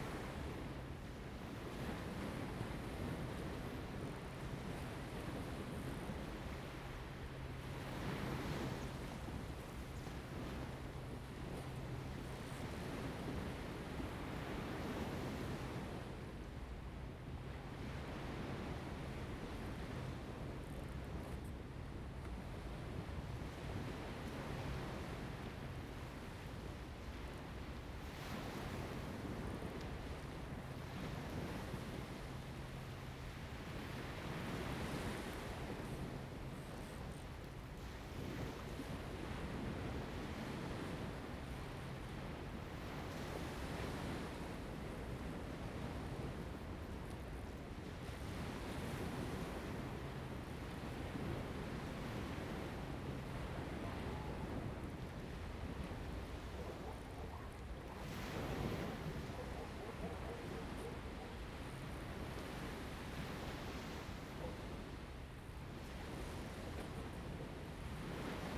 At the beach, Sound of the waves, Birds singing
Zoom H2n MS +XY
4 November 2014, 10:06